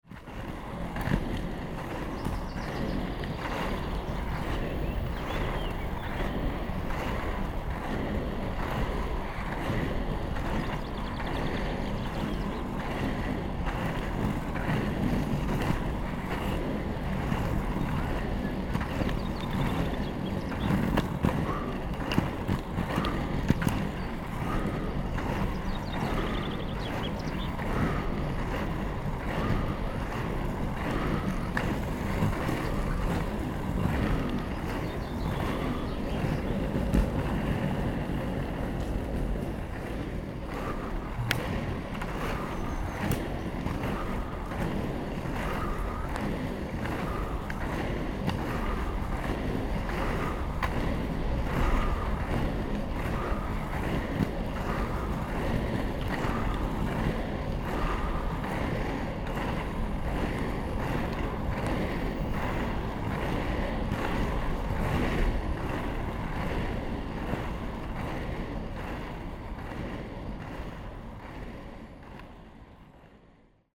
Osmoy-Saint-Valery, France - BINAURAL Skating on the Voie Verte
BINAURAL SOUND (have to listen with headphones!)
Me skating on the "voie verte"...
8 August 2013